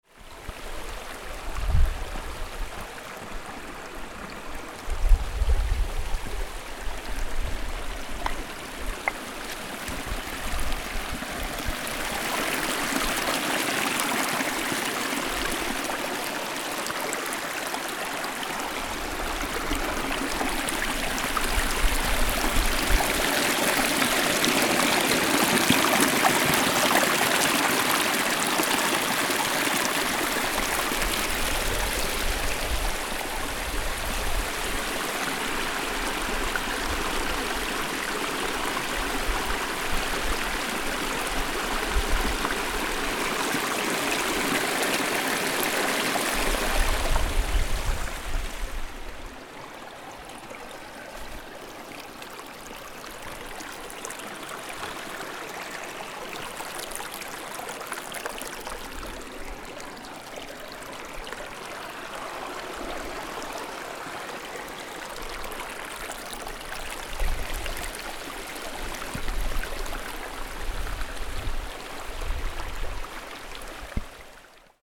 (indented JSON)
{
  "title": "Wasserbach am Restipass, Schmelzwasser",
  "date": "2011-07-08 12:45:00",
  "description": "Schelzwasser in Bach am Restipass, gute Weitsicht, keine anderen Wander.innen, Sonne ist hell und grell, Wind macht zu schaffen",
  "latitude": "46.39",
  "longitude": "7.73",
  "altitude": "2225",
  "timezone": "Europe/Zurich"
}